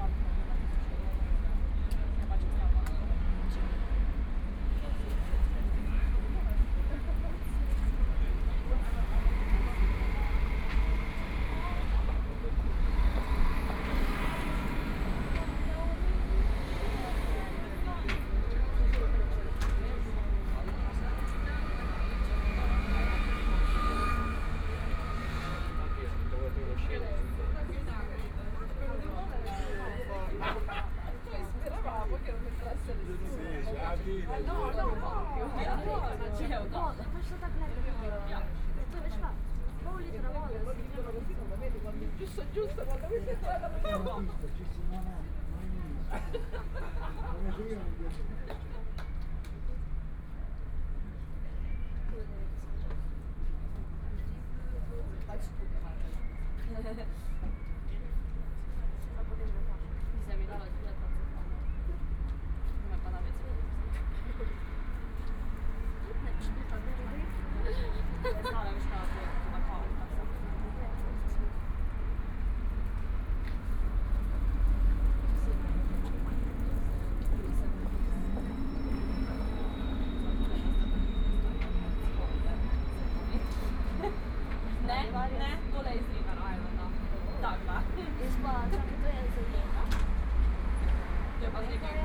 {"title": "Odeonplatz, Munich - Sitting in front of the coffee shop", "date": "2014-05-11 12:54:00", "description": "Sitting in front of the coffee shop, Footsteps, Traffic Sound, Birdsong", "latitude": "48.14", "longitude": "11.58", "altitude": "516", "timezone": "Europe/Berlin"}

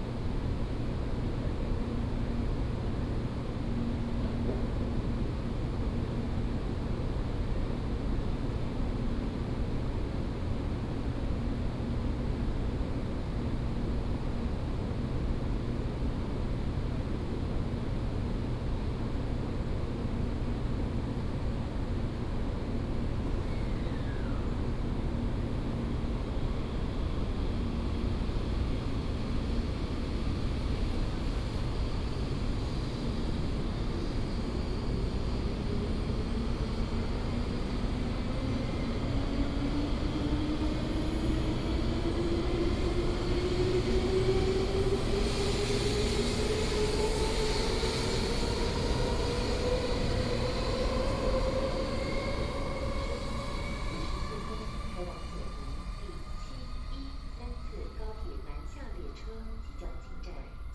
{"title": "Banqiao Station, New Taipei City - In the high-speed rail station platform", "date": "2015-09-16 15:40:00", "description": "In the high-speed rail station platform, Trains arrive and depart", "latitude": "25.01", "longitude": "121.46", "altitude": "20", "timezone": "Asia/Taipei"}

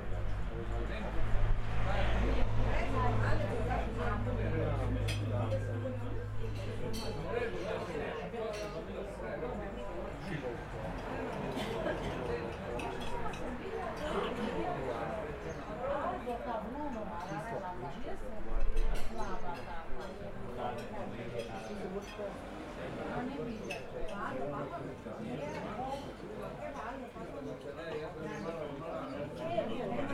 {"title": "Restavracija Pecivo - relax ob 12h", "date": "2017-06-20 12:00:00", "description": "Čas kosila pred restavracijo v starem predelu Nove Gorice", "latitude": "45.96", "longitude": "13.65", "altitude": "98", "timezone": "Europe/Ljubljana"}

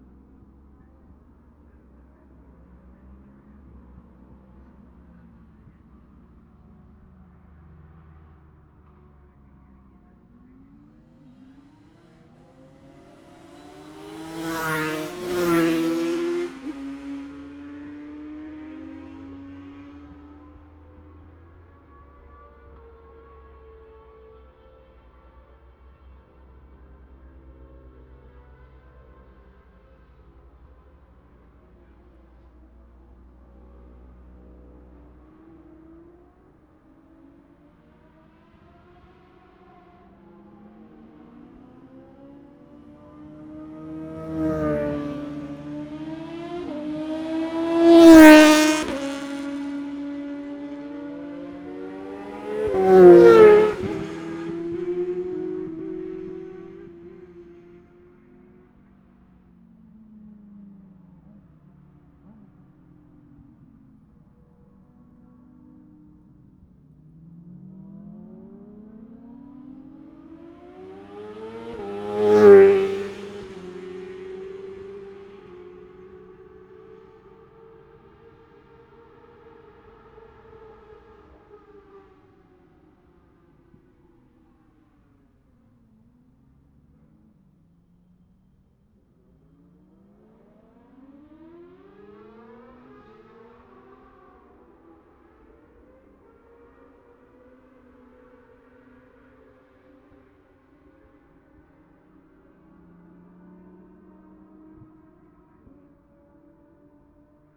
{"title": "Jacksons Ln, Scarborough, UK - Gold Cup 2020 ...", "date": "2020-09-11 09:00:00", "description": "Gold Cup 2020 ... new comers practice and twins practice ... Memorial Out ... Olympus LS14 integral mics ...", "latitude": "54.27", "longitude": "-0.41", "altitude": "144", "timezone": "Europe/London"}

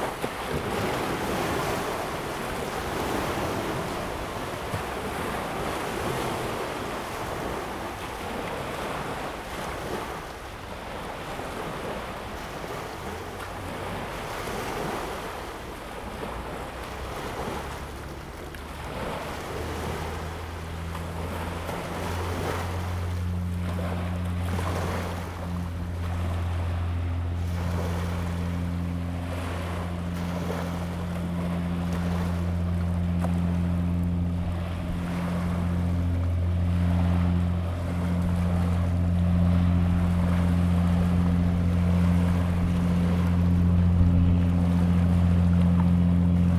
{"title": "成功漁港, Chenggong Township - Sound of the waves", "date": "2014-09-06 15:11:00", "description": "Sound of the waves, The weather is very hot\nZoom H2n MS +XY", "latitude": "23.10", "longitude": "121.38", "altitude": "8", "timezone": "Asia/Taipei"}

